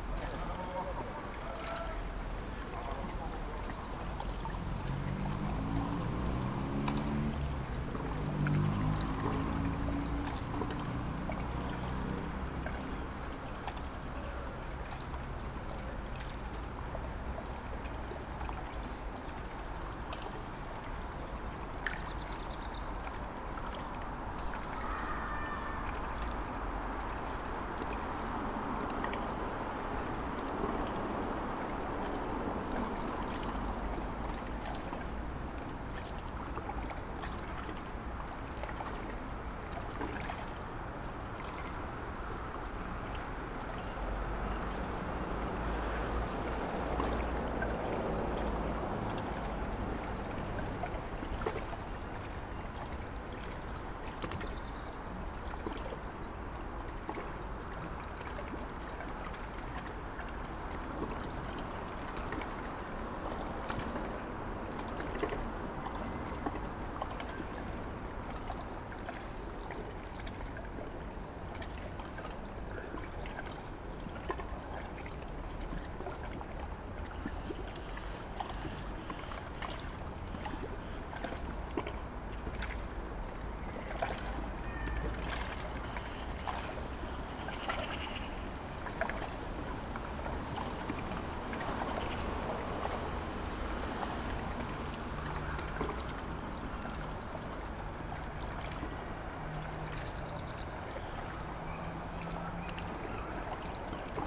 Kaliningrad, Russia, echoes from the ship
short echoes between the ship and building
Kaliningradskaya oblast, Russia, 8 June, 19:50